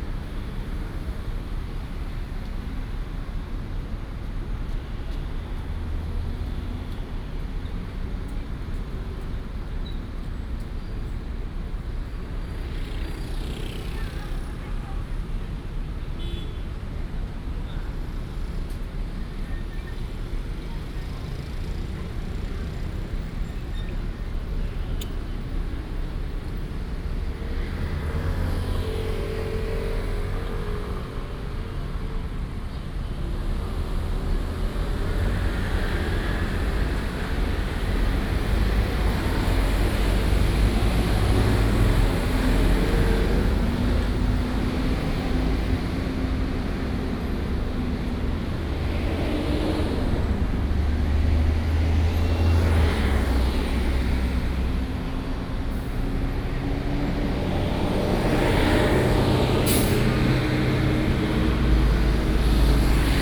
Gongyuan Rd., Zhongzheng Dist., Taipei City - Traffic Sound
In the park entrance, Traffic Sound
Taipei City, Taiwan, August 2016